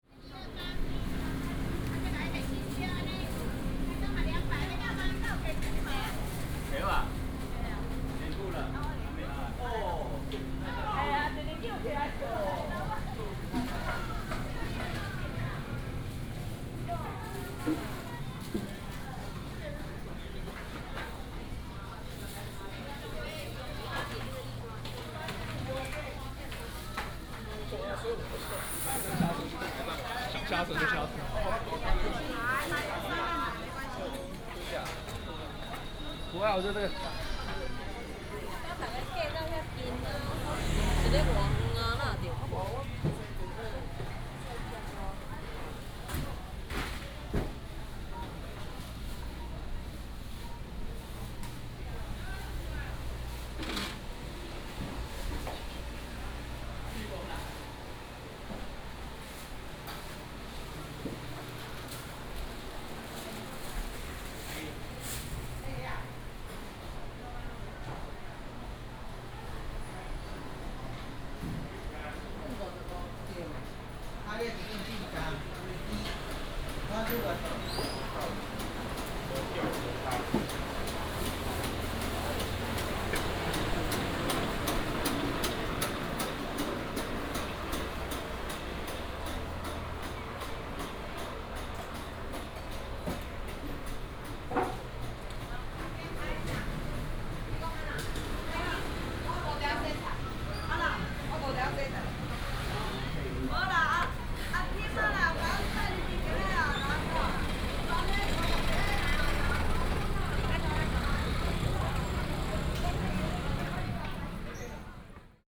{"title": "苑裡公有市場, 苗栗縣苑裡鎮 - Walk in the interior market", "date": "2017-01-19 12:00:00", "description": "Walking through the market, A variety of vendors", "latitude": "24.44", "longitude": "120.65", "altitude": "26", "timezone": "Asia/Taipei"}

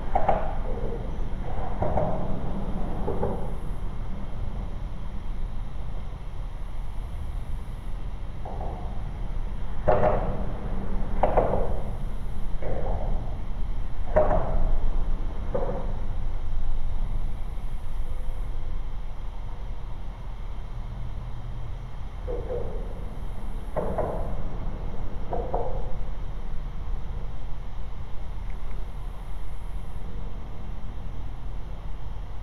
{"title": "Liberty Rd, Houston, TX, USA - Underpass ping pong", "date": "2021-09-20 13:54:00", "description": "Sounds of vehicles driving ove expansion joins on overpass above. Distant train noises can be heard from huge railyard.", "latitude": "29.80", "longitude": "-95.29", "altitude": "17", "timezone": "America/Chicago"}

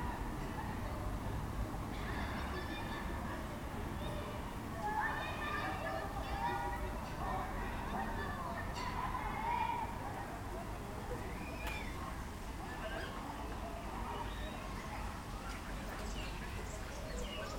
{"title": "Ottignies-Louvain-la-Neuve, Belgique - Common Starling song", "date": "2018-09-30 12:37:00", "description": "The very soothing sound of my home from the balcony. At the backyard, children playing, neighbors doing a barbecue. In the gardens, two Common Starling discussing and singing. This bird is exceptional and vocalize very much. In aim to protect the territory, the bird imitates Common Buzzard hunting, European Green Woodpecker distress shout, Blackbird anxiety shout. Also, they imitate Canada Goose, because there's a lot of these birds on the nearby Louvain-La-Neuve lake. In aim to communicate, the bird produce some strange bursts of creaks. The contact shout, when birds are far each other, is a repetitive very harsh shrill sound.\nThese birds are not here every day. They especially like to eat rotten fruits during autumn. It's a real pleasure when they are at home.", "latitude": "50.66", "longitude": "4.61", "altitude": "123", "timezone": "GMT+1"}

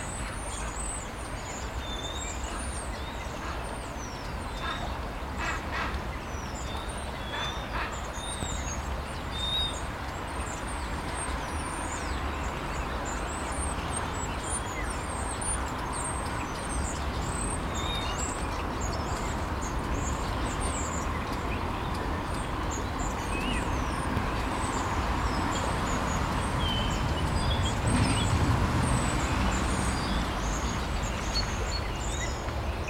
{"title": "Salisbury, UK - 052, Birds, Avon, cars, people", "date": "2017-02-21 12:33:00", "latitude": "51.08", "longitude": "-1.80", "altitude": "50", "timezone": "GMT+1"}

